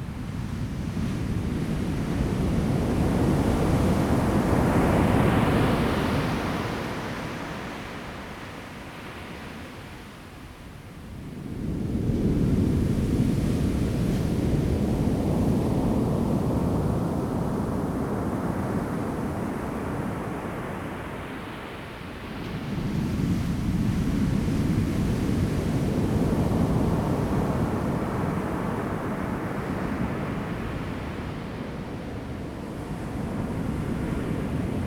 太麻里海灘, Taitung County - Sound of the waves
Sound of the waves
Zoom H2n MS+XY
Taitung County, Taiwan, 3 April 2018